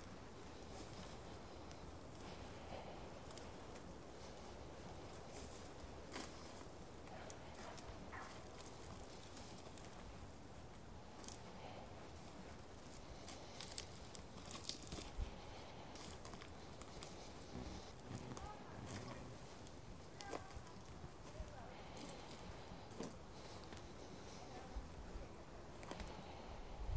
Musapstan Forest Park, Zadar, Croatia
I walk through the woods with my family
Zadarska županija, Hrvatska